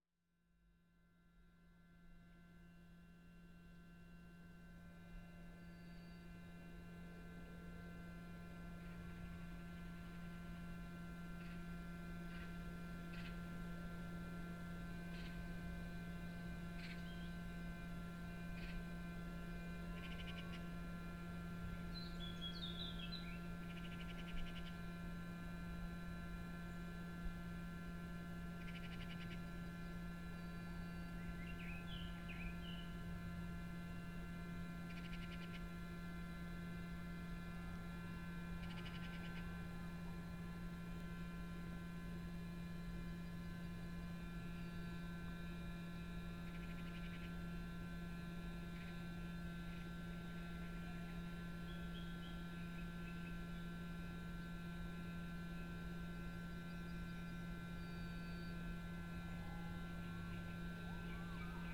Borne Sulinowo, Polska - Sewage treatment plant @ Borne Sulinowo
sound recorded at the gate to sewage treatment plant @ borne Sulinowo. Binaural records